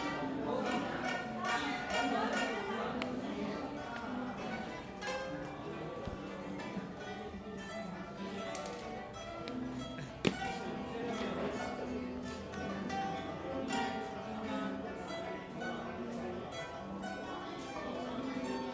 The confucious temple in city of Tainan in Taiwan 台南孔廟 - 台南孔廟 以成書院 13音教學
A class teaching about the traditional 13 instrument for Confucius ceremony.
Tainan City, Taiwan, 7 May 2014, 20:20